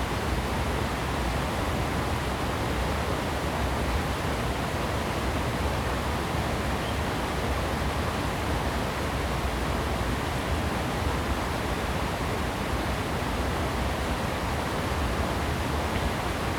桃米溪, 桃米里 Nantou County - Weir
Weir, Flow sound
Zoom H2n MS+XY
4 May 2016, 16:53